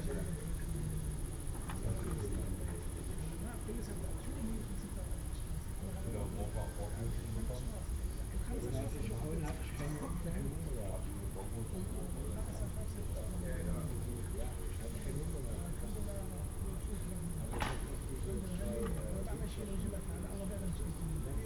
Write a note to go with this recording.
Berlin Buch, Am Sandhaus, edge of the road, night ambience, men talking on a balcony, someone dumps waste, crickets, it's warm and humid, (Sony PCM D50, Primo EM172)